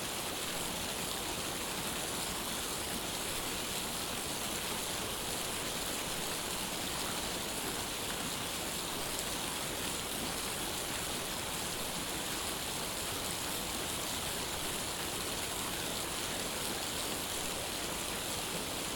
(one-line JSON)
{"title": "The College of New Jersey, Pennington Road, Ewing Township, NJ, USA - Science Complex Water Fountain", "date": "2014-09-30 09:45:00", "description": "The fountain was dyed pink for breast cancer awareness", "latitude": "40.27", "longitude": "-74.78", "altitude": "44", "timezone": "America/New_York"}